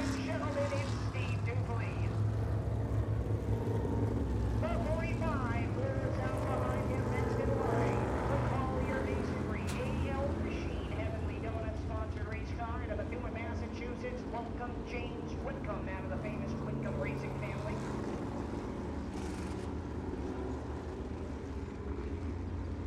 The feature race for the 18 SMAC 350 Supermodifieds. Since they have to be push started it takes awhile for the race to actually start.